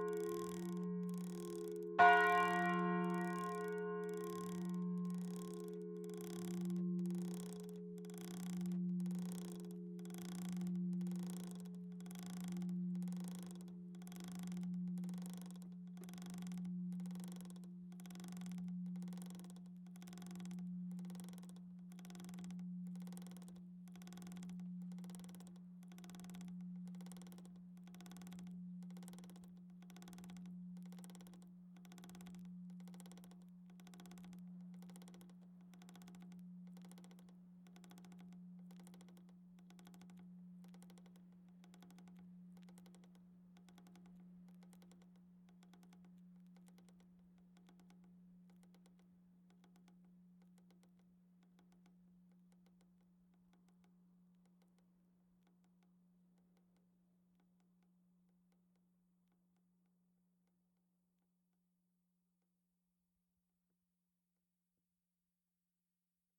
Randonnai (Orne)
Église St-Malo
la volée
Normandie, France métropolitaine, France, 16 October